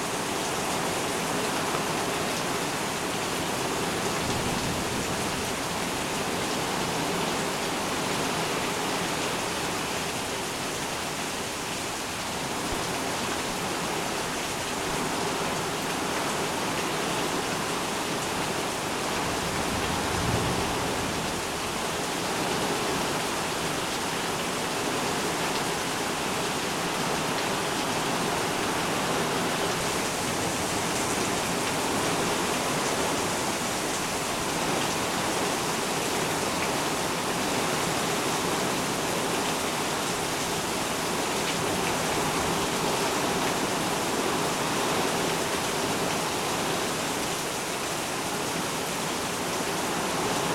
Kendale Lakes, FL, USA - Severe Rainstorm passing
School yard
Passing Rainstorm- classified as Severe storm with wind gusts of 50 knts.
Recording made under shelter as storm passes and winds are decreasing.
Note wind gusts
15 June 2014, ~15:00